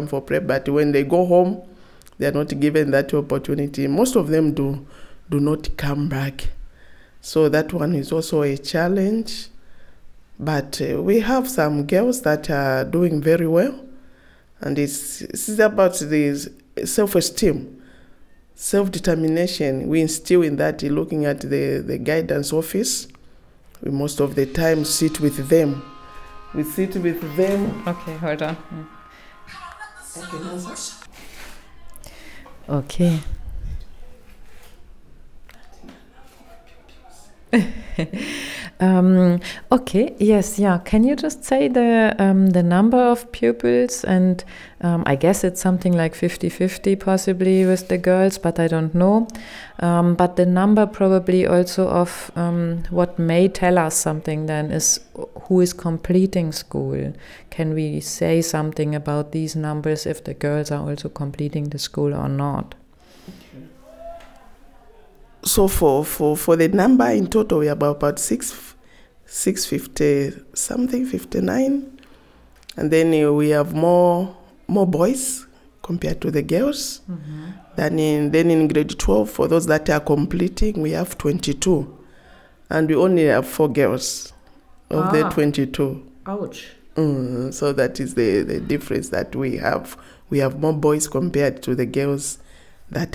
Sinazongwe Primary, Senior Teachers Office, Sinazongwe, Zambia - Expectations on girls...
Over the 50 years since it was established, the school didn’t have one female head teacher… Mrs Chilowana Senior Teacher tells us. In the main part of the interview, we ask Mrs Chilowana to describe for us the social expectations on girl and boy children in the rural community... Mrs Chilowana has been in the teaching services for 25 year; the past 10 years as a Senior Teacher at Sinazongwe Primary/ Secondary School.
the entire interview can be found here:
Southern Province, Zambia, 22 August 2018